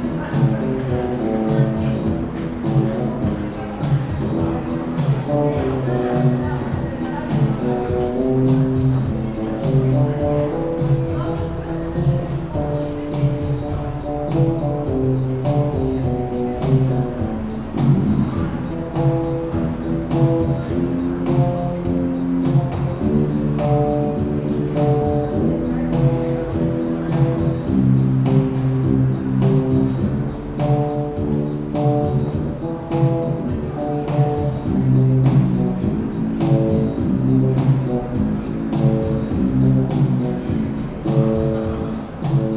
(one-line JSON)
{"title": "Minsk, Prospekt Nezavisimosti - No Wave Busker", "date": "2014-05-04 18:40:00", "description": "Busker in an underpass, playing songs from Soviet films on his bass accompanied by a drum machine.", "latitude": "53.90", "longitude": "27.56", "altitude": "217", "timezone": "Europe/Minsk"}